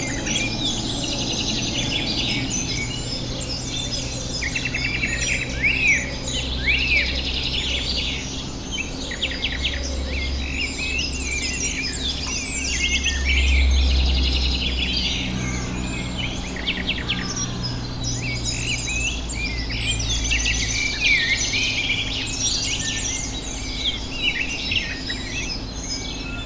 {"title": "Waking up at my parents house", "date": "2011-05-09 06:16:00", "description": "Bird activity recorded directly from my bedroom during a visit to my parents house. Used a LS5 at maximum gain.", "latitude": "40.40", "longitude": "-7.86", "altitude": "379", "timezone": "Europe/Lisbon"}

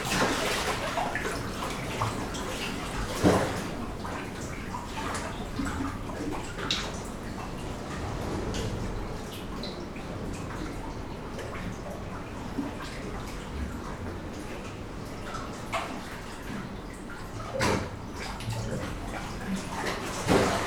{"title": "Peyia, Cyprus - Blow Hole, Cyprus", "date": "2019-01-22 12:20:00", "description": "Found this little blow hole in the sea cave systems near the shipwreck of Edro III. There were two fissures to \"post\" microphones through. This selection is a short segment extracted from a 75 minute recording. I suspended 2 Brady (Primo capsule) mics to within 50 cm of the water surface recording to Olympus LS11. I was entranced by the immediate and immersive experience. The resonance and reverberation within the cave, the subtle and gentle rhythms and splashings with the pedal note of the waves breaking just along the coast. A beautiful location, we sunbathed (17C!) and enjoyed a shimmering, calm sea in that inexplicable winter sunlight.", "latitude": "34.87", "longitude": "32.34", "altitude": "7", "timezone": "GMT+1"}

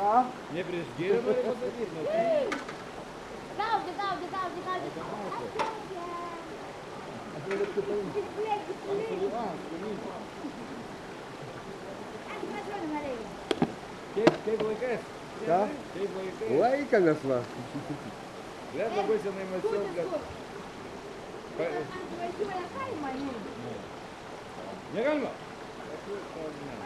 {"title": "Lithuania, Kavarskas, talks at the holy source", "date": "2013-04-14 14:45:00", "description": "so called holy St. Jonas spring and local peoples taking it's water", "latitude": "55.43", "longitude": "24.93", "altitude": "82", "timezone": "Europe/Vilnius"}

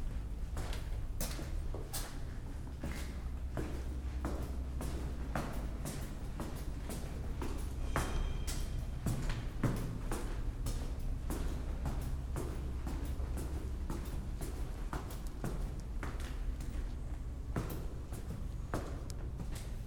{"title": "Tallinn, Kultuurikatel - soundwalking", "date": "2011-07-08 10:16:00", "description": "walk in old power plant complex, now used for cultural events. parts of stalker from tarkovsky have been filmed here.", "latitude": "59.44", "longitude": "24.75", "altitude": "14", "timezone": "Europe/Tallinn"}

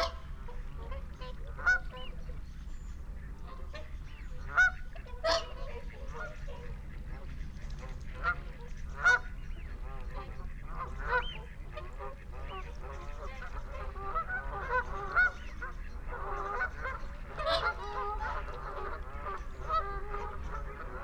{"title": "Dumfries, UK - barnacle geese flyover ...", "date": "2022-01-31 17:25:00", "description": "barnacle geese flyover ... xlr sass to zoom h5 ... bird calls ... mallard ... canada ... wigeon ... whooper swan ... shoveler ... wigeon ... carrion crow ... blackbird ... time edited extended unattended recording ...", "latitude": "54.98", "longitude": "-3.48", "altitude": "8", "timezone": "Europe/London"}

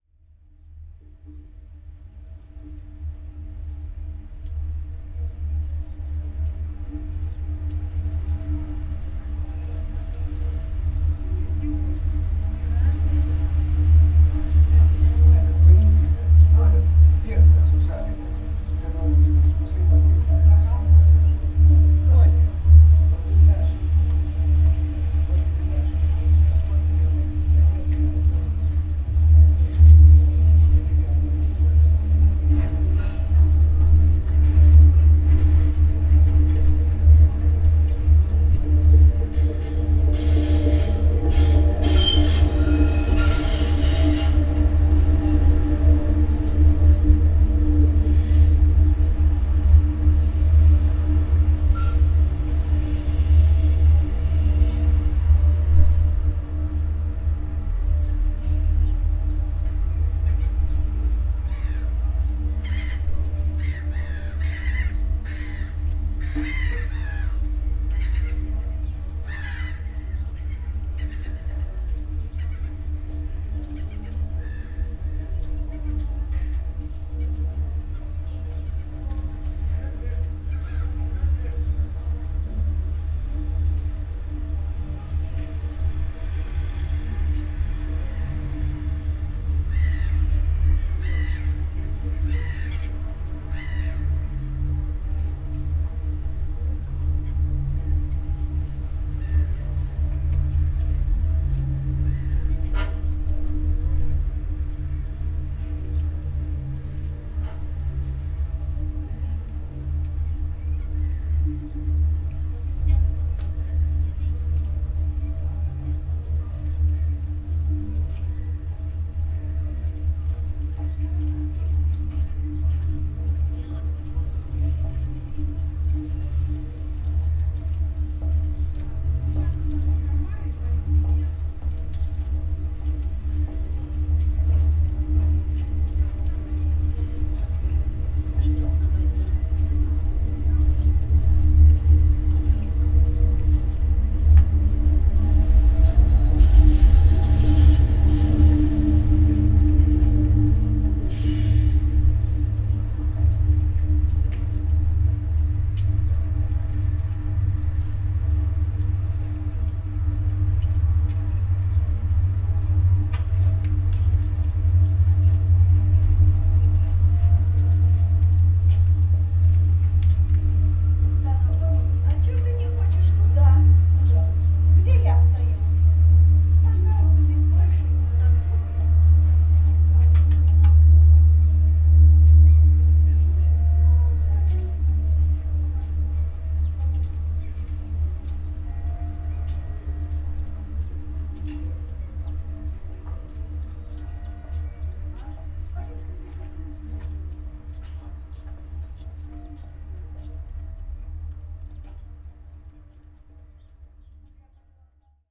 {"title": "Tallinn, Baltijaam sewer drain cover - Tallinn, Baltijaam sewer drain cover (recorded w/ kessu karu)", "date": "2011-04-22 14:57:00", "description": "hidden sounds, inside an almost completely closed manhole into sewer drains under Tallinns main train station.", "latitude": "59.44", "longitude": "24.74", "altitude": "18", "timezone": "Europe/Tallinn"}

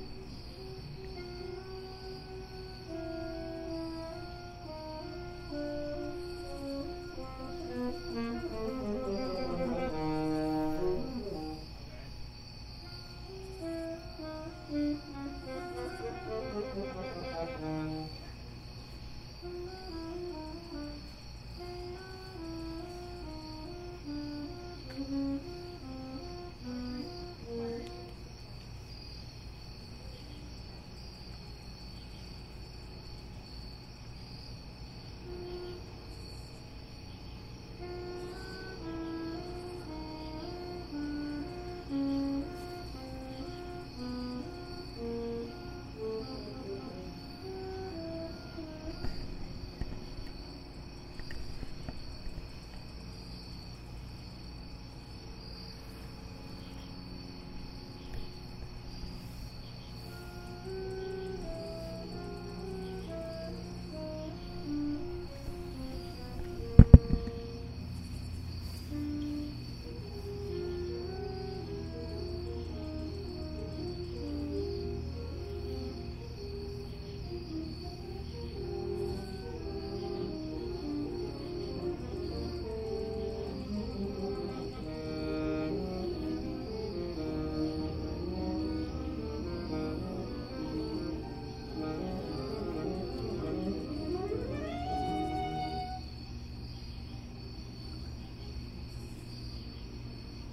{"title": "The College of New Jersey, Pennington Road, Ewing Township, NJ, USA - Saxophones", "date": "2014-09-29 19:38:00", "description": "Saxophones rehearsing at night", "latitude": "40.27", "longitude": "-74.78", "altitude": "43", "timezone": "America/New_York"}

